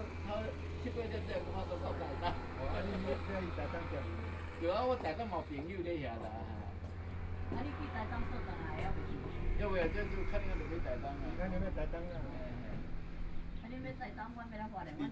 Tourists, Hot weather, Traffic Sound, Sound of the waves